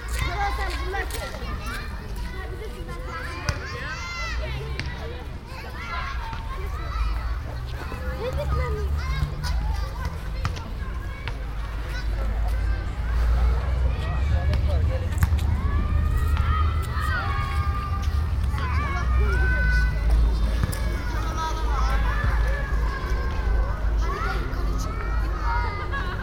cologne, mainzer str, school backyard
kids play, steps, voices and balls
soundmap nrw: social ambiences/ listen to the people in & outdoor topographic field recordings
mainzer strasse, 2 August 2009